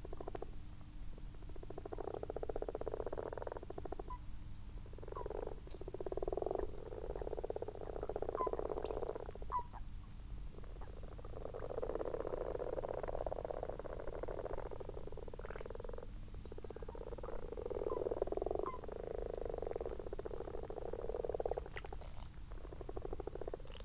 Malton, UK - frogs and toads ...
common frogs and common toads in a garden pond ... xlr sass on tripod to zoom h5 ... bird call ... distant tawny owl 01:17:00 plus ... unattended time edited extended recording ...
Yorkshire and the Humber, England, United Kingdom, 21 March 2022, ~01:00